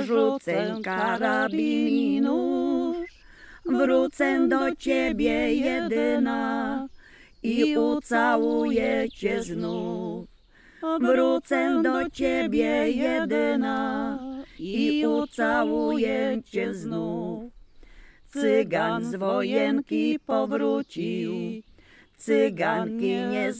Loryniec - Piosenka o Cyganie
Piosenka nagrana w ramach projektu : "Dźwiękohistorie. Badania nad pamięcią dźwiękową Kaszubów".